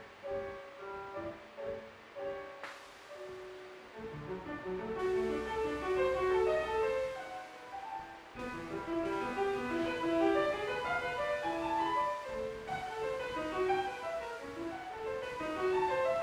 Werden, Essen, Deutschland - essen, folkwang university of arts
Im historischen Gebäude der Folkwang Universtät der Künste Abteilung Musik Klavieretuden aus einem Übungsraum, Schritte auf dem alten Fussboden, eine Tür.
Inside the historical building of the folkwang university of arts at the music department in the first floor. The sounds of piano music out of a rehearsal room, of steps on the old floor and a door.
Projekt - Stadtklang//: Hörorte - topographic field recordings and social ambiences
29 April 2014, 15:20